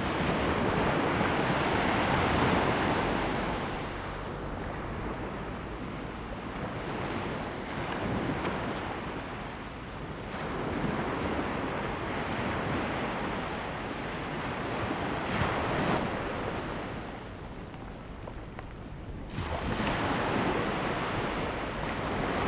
Checheng Township, Pingtung County - at the seaside
at the seaside, wind sound, Sound of the waves
Zoom H2n MS+XY
Pingtung County, Taiwan, 2 April 2018, ~17:00